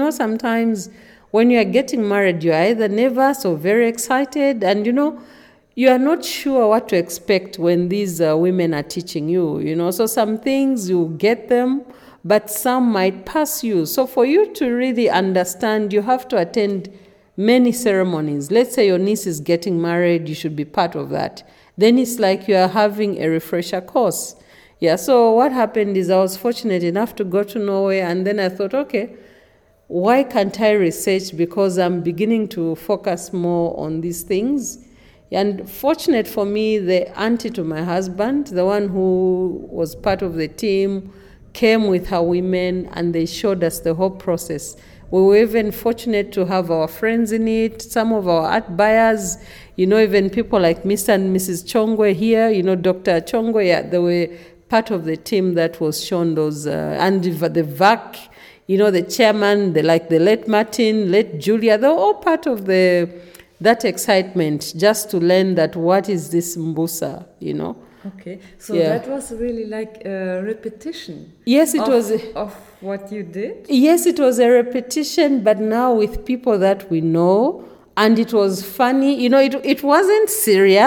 Wayi Wayi Gallery & Studio, Livingstone, Zambia - Agness Buya Yombwe describes “Musa Ceremony”...
… a bit later inside Wayi Wayi Gallery, Agness describes “Mbusa Ceremony”, an arranged re-staging of Agness’ and Laurence Bemba wedding (ubwinga), at once performance and research. The event initiated the artist-couple as well as many invited guests into the secret teachings of Mbusa, it married traditional women’s craft to the realm of contemporary arts and opened new channels of communication between indigenous culture, Art, ritual, performance, teaching, and life.
She then goes on to talk about how indigenous culture inspires her as a contemporary artist, and refers to a recording from Binga, I had played to her earlier which left a picture in her head… (it’s the recording with Luyando and Janet at BaTonga Museum about women’s initation among the Tonga people; you can find it here on the map...)
13 November 2012, 20:55